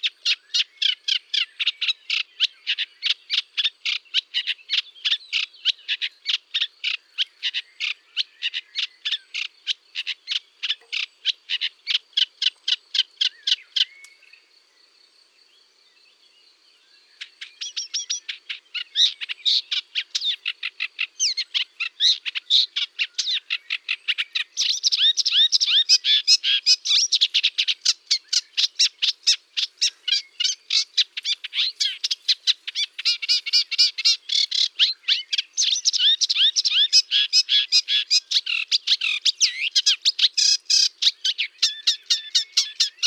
Rouserolle effarvate
Tascam DAP-1 Micro Télingua, Samplitude 5.1